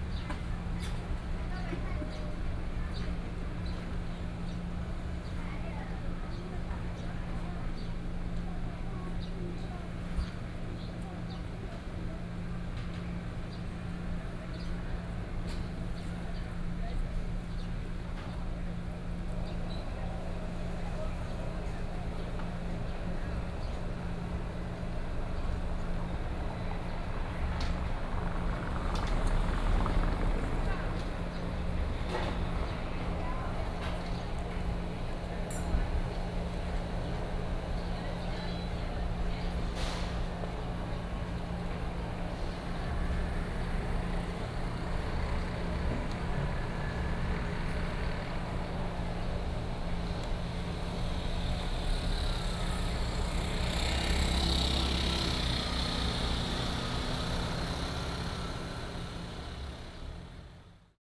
枋寮鄉海邊路, Fangliao Township - seafood market
seafood market, traffic sound, birds sound
Pingtung County, Taiwan